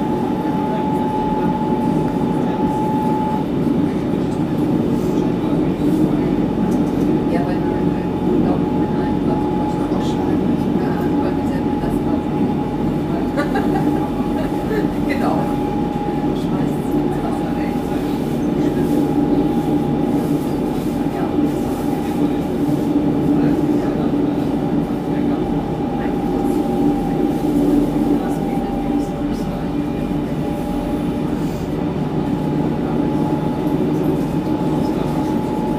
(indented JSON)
{
  "title": "berlin, inside suburban train",
  "date": "2009-04-29 20:20:00",
  "description": "recorded nov 14th, 2008.",
  "latitude": "52.44",
  "longitude": "13.23",
  "altitude": "44",
  "timezone": "GMT+1"
}